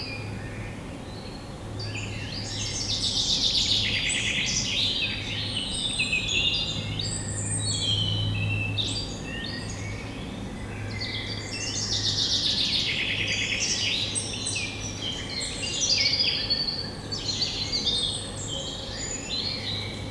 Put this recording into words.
Recording of the birds in the woods. The first bird is a Common Chaffinch. After it's a Blackbird.